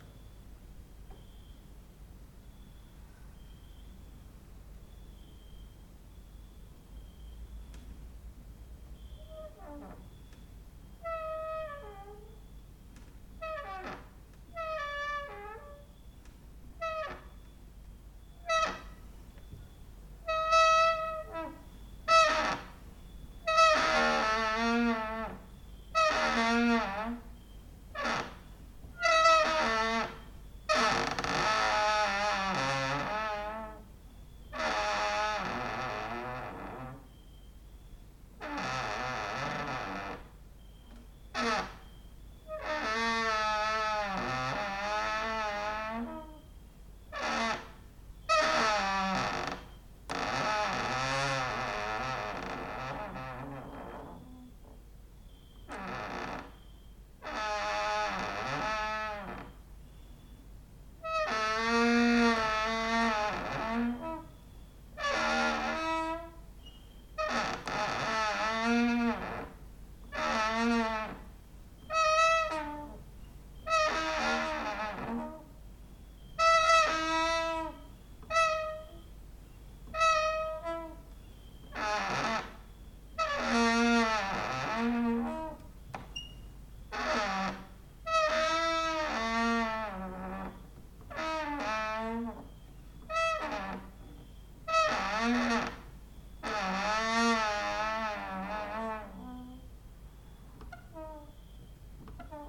Mladinska, Maribor, Slovenia - late night creaky lullaby for cricket/13/part 1
cricket outside, exercising creaking with wooden doors inside
22 August 2012, ~00:00